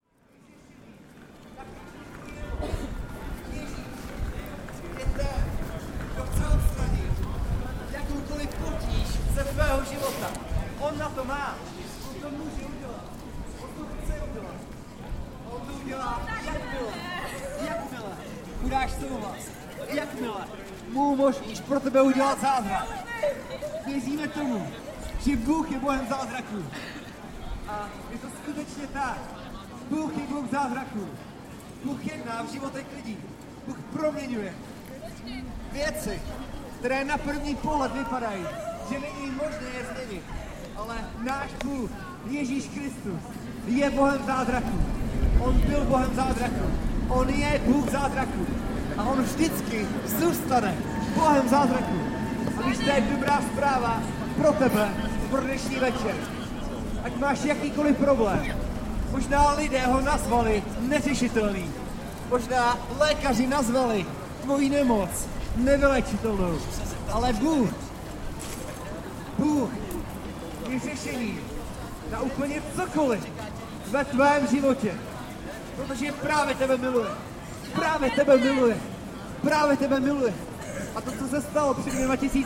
street preacher, u Andela
performance in front of shopping moll of a preacher and a musician
24 September